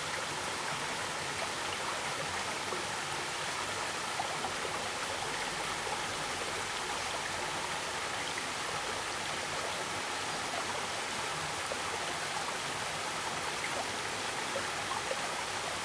中路坑, 桃米里, Puli Township - small waterfall and Stream
small waterfall, small Stream
Zoom H2n MS+XY